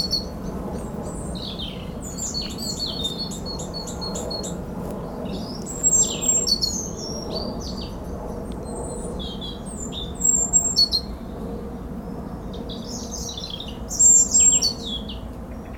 A blackbird and a tit are singing. At the end, a plane is doing big noise in the sky.
Montesson, France, 23 September, 09:00